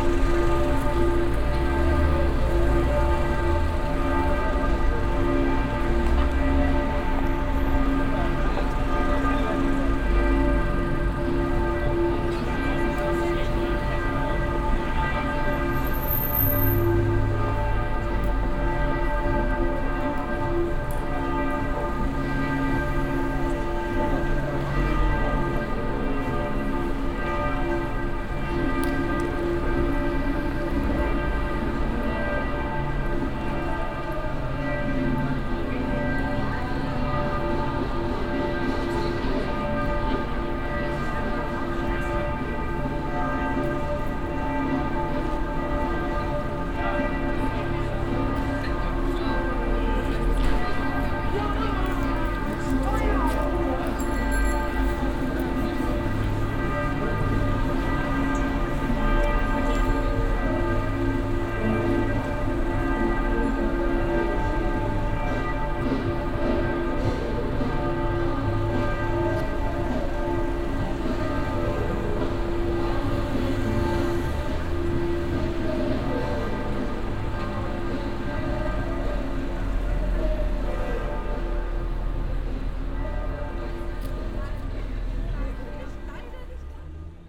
cologne, am hof, domglocken
domglocken abends reflektiert vom carlton haus inmitten des regen altstadtpublikum verkehrs
soundmap nrw - weihnachts special - der ganz normale wahnsinn
social ambiences/ listen to the people - in & outdoor nearfield recordings
am hof, vor carlton haus